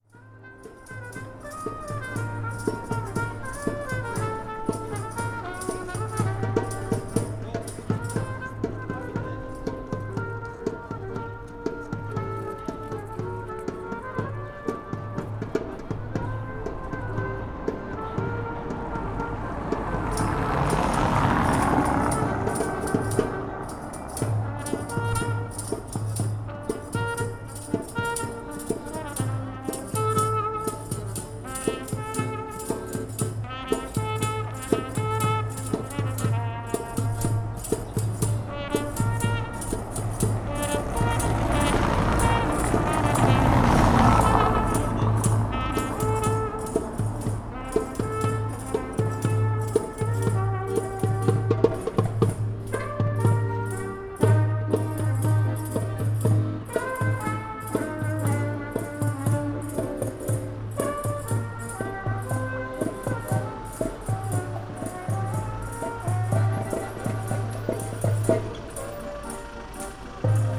berlin: friedelstraße - the city, the country & me: street musicians
musicians performing along the street
the city, the country & me: march 27, 2011
Berlin, Germany, 27 March 2011, 16:26